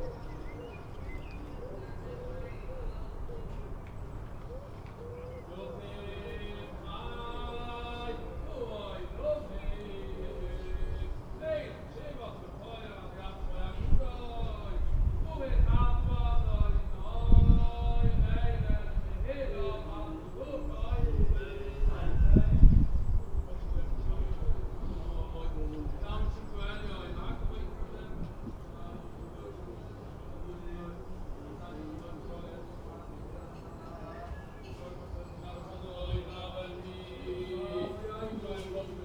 Lamorinierestraat, Antwerp, Belgium - Pesach liturgy over Corona-crisis
Orthodox Jews singing the liturgy during the Corona-crisis, with 10 men spread over gardens and balconies.
11 April 2020, ~11:00